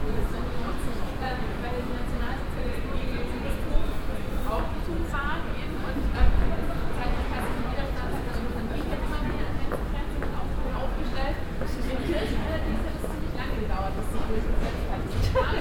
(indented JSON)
{
  "title": "cologne, altstadt, muehlengasse, vor brauhaus peters",
  "date": "2008-12-23 17:44:00",
  "description": "abendliche führung für touristen vor dem brauhaus peters - zur geschichte des adventskranzes\nsoundmap nrw - weihnachts special - der ganz normale wahnsinn\nsocial ambiences/ listen to the people - in & outdoor nearfield recordings",
  "latitude": "50.94",
  "longitude": "6.96",
  "altitude": "57",
  "timezone": "Europe/Berlin"
}